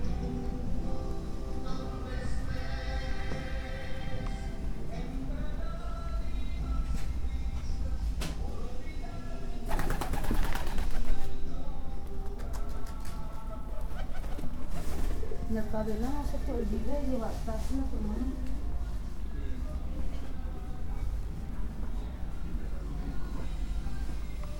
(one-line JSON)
{"title": "Julián de Obregón, Obregon, León, Gto. - Entrando a una vecindad y caminando por sus pasillos.", "date": "2021-11-29 13:38:00", "description": "Entering a neighborhood (called \"vecindad\" in Mexico) and walking through its corridors.\nI made this recording on November 29, 2021, at 1:38 p.m.\nI used a Tascam DR-05X with its built-in microphones and a Tascam WS-11 windshield.\nOriginal Recording:\nType: Stereo\nEsta grabación la hice el 29 de noviembre de 2021 a las 13:38 horas.", "latitude": "21.13", "longitude": "-101.69", "altitude": "1808", "timezone": "America/Mexico_City"}